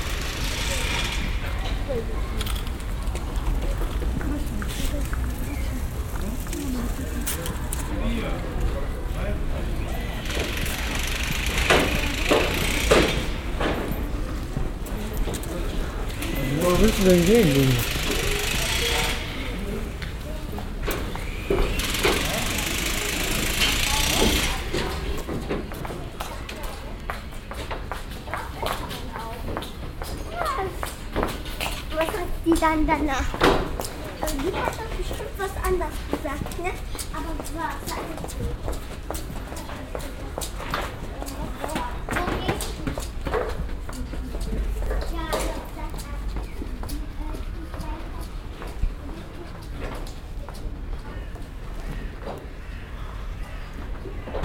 renovierungsarbeiten and en hausfassaden in den wohnblöcken, nachmittags
soundmap nrw:
social ambiences, topographic field recordings